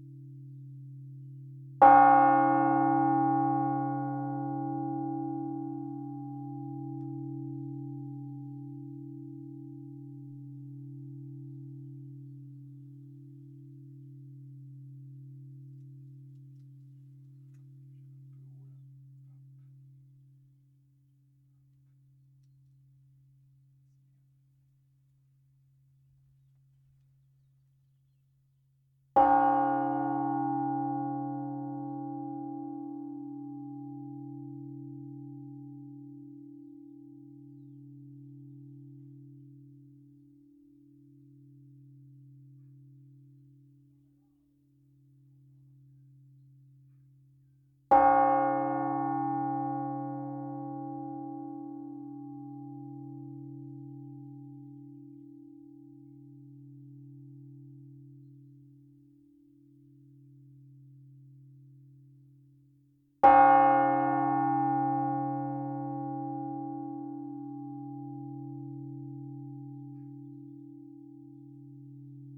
Rue de l'Église, Flines-lès-Mortagne, France - Flines-Lez-Mortagne (Nord) - église
Flines-Lez-Mortagne (Nord)
église - Tintement manuel cloche grave